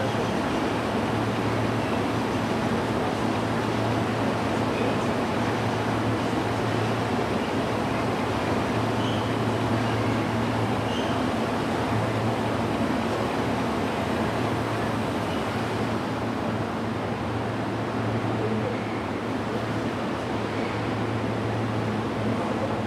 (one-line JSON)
{"title": "Engelbert-Weiß-Weg, Salzburg, Österreich - train arrive", "date": "2021-09-14 13:55:00", "latitude": "47.81", "longitude": "13.05", "altitude": "425", "timezone": "Europe/Vienna"}